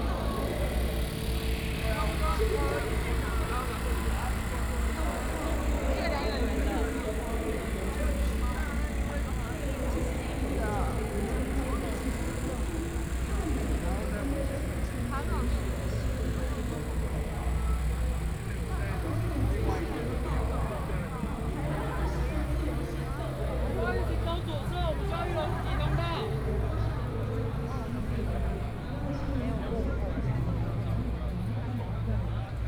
Jinan Rd., Zhongzheng Dist. - speech
Occupy Taiwan Legislature, Walking through the site in protest, Traffic Sound, People and students occupied the Legislature
Binaural recordings